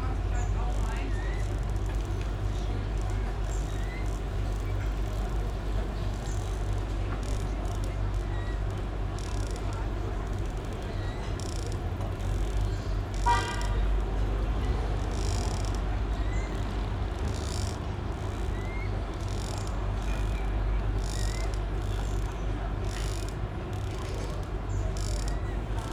{
  "title": "Alt-Treptow, Berlin, Deutschland - restaurant boat, ambience",
  "date": "2012-07-22 20:15:00",
  "description": "Sunday evening ambience at Rummelsburger See, river Spree, near a group of restaurant boats, sound of the exhaust, young coots, and other details.\n(SD702, Audio Technica BP4025)",
  "latitude": "52.49",
  "longitude": "13.48",
  "altitude": "36",
  "timezone": "Europe/Berlin"
}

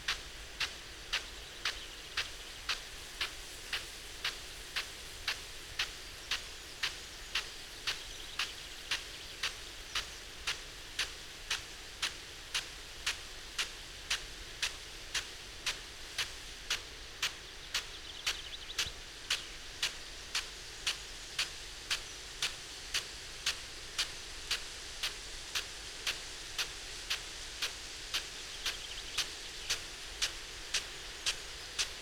{
  "title": "Croome Dale Ln, Malton, UK - field irrigation system ...",
  "date": "2020-05-20 07:00:00",
  "description": "field irrigation system ... parabolic ... Bauer SR 140 ultra sprinkler to Bauer Rainstart E irrigation unit ... bless ...",
  "latitude": "54.11",
  "longitude": "-0.55",
  "altitude": "85",
  "timezone": "Europe/London"
}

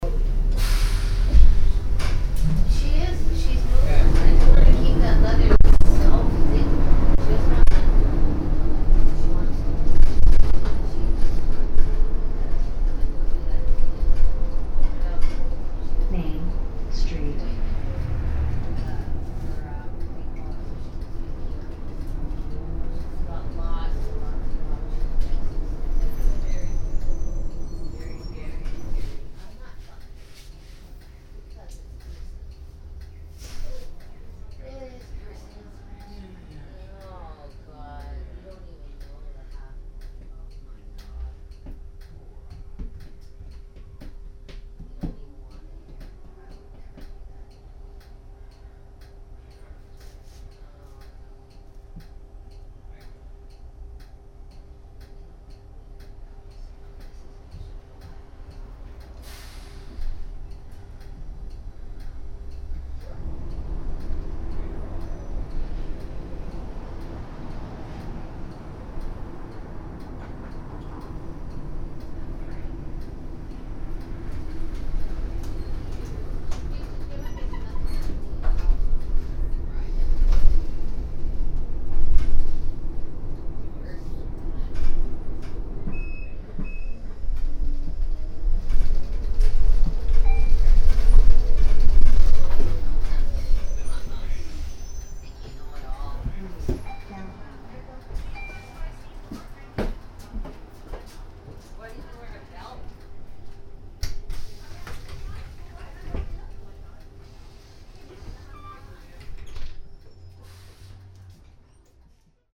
{
  "title": "vancouver - main street - in the bus - vancouver, main street, in the bus",
  "description": "driving in a city bus downtown - announcement - female voice - main street, doors open, people exit",
  "latitude": "49.28",
  "longitude": "-123.10",
  "altitude": "14",
  "timezone": "GMT+1"
}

